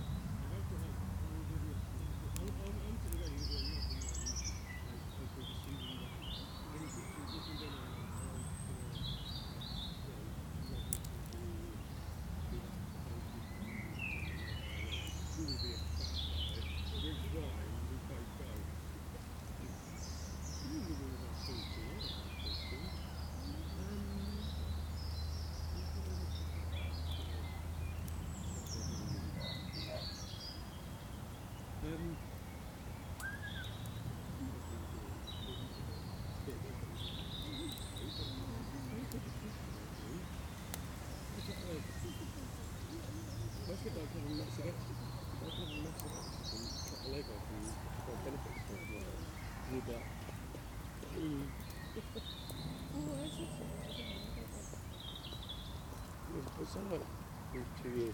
Ditchling Common, Hassocks, UK - Lazing in a park on a summers day
Recorded while eating a picnic. People, dogs, insects and planes pass by.
England, United Kingdom, 31 May, 12:55